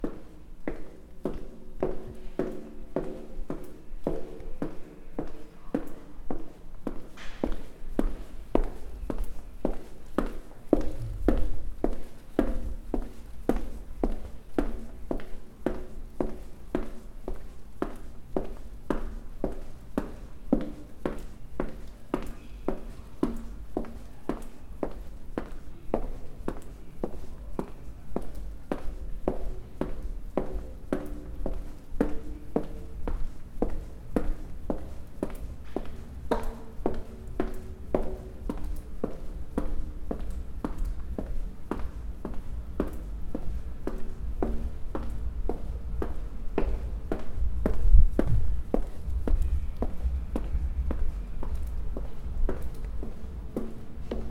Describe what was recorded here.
Recording (Zoom H4n) of myself walking down a long corridor through the art collection of 'Hamburger Bahnhof'.